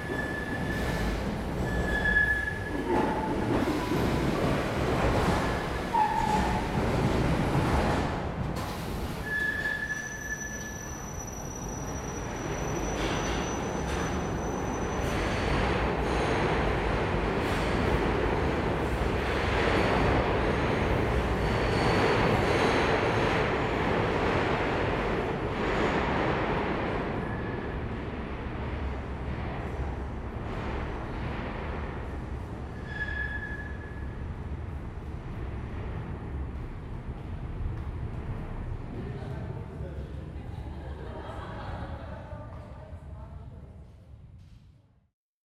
Budapest, Bajcsy-Zsilinszky út, Hungary - (-191) Platform at Bajcsy-Zsilinszky út metro station
Stereo recording from a platform at Bajcsy-Zsilinszky út metro station in Budapest.
recorded with Zoom H2n
posted by Katarzyna Trzeciak